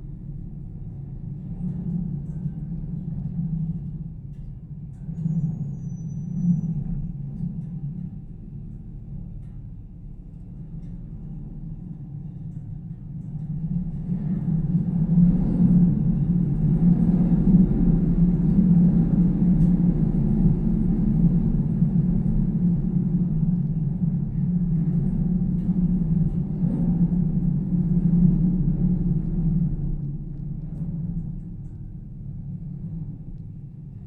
{"title": "watchtower at Paljasaare sanctuary Tallinn, wire structure", "date": "2010-05-30 16:40:00", "description": "recording from the Sonic Surveys of Tallinn workshop, May 2010", "latitude": "59.48", "longitude": "24.71", "altitude": "4", "timezone": "Europe/Tallinn"}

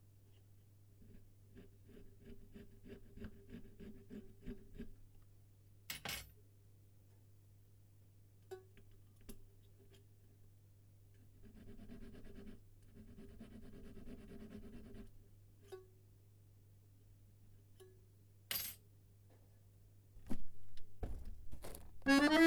{"title": "largo cesare reduzzi 5 - Mirko Ceccaroli accordion repair workshop", "date": "2018-03-27 16:25:00", "description": "tuning an accordion in my laboratory", "latitude": "41.85", "longitude": "12.41", "altitude": "53", "timezone": "Europe/Rome"}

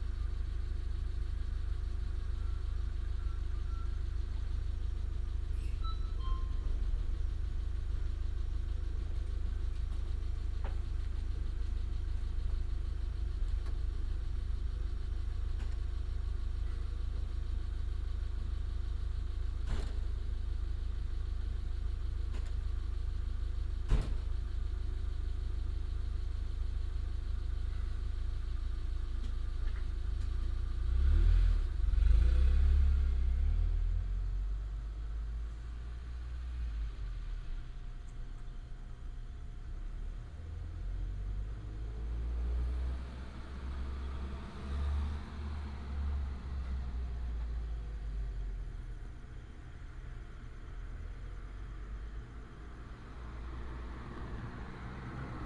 {"title": "中正路69號, Nanzhuang Township - Morning road", "date": "2017-09-19 04:39:00", "description": "Morning road, Outside the convenience store, Binaural recordings, Sony PCM D100+ Soundman OKM II", "latitude": "24.64", "longitude": "120.95", "altitude": "90", "timezone": "Asia/Taipei"}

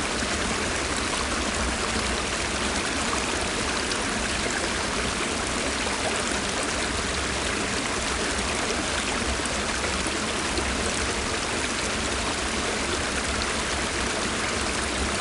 {"title": "Newry and Mourne, UK - Kilbroney Stream 1", "date": "2016-02-20 14:15:00", "description": "Recorded with a pair of DPA 4060s and a Marantz PMD 661", "latitude": "54.10", "longitude": "-6.18", "altitude": "111", "timezone": "Europe/London"}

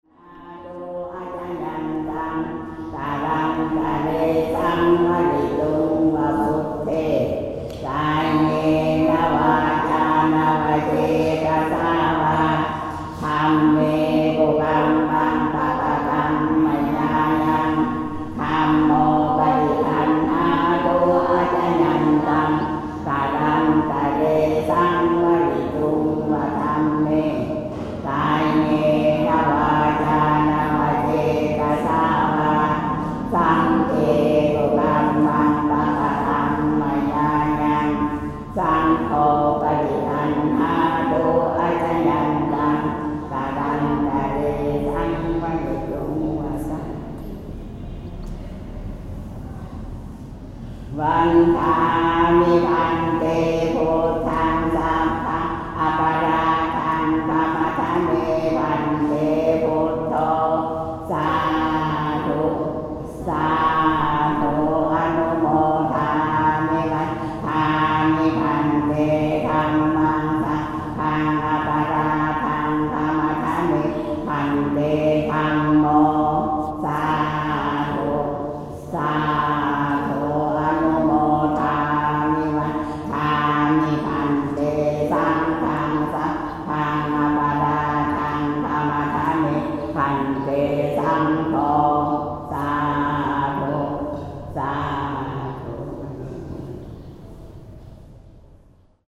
{"date": "2009-04-17 16:12:00", "description": "Luang Prabang, Wat Nong Temple, an old woman singing with a child", "latitude": "19.90", "longitude": "102.14", "altitude": "298", "timezone": "Asia/Vientiane"}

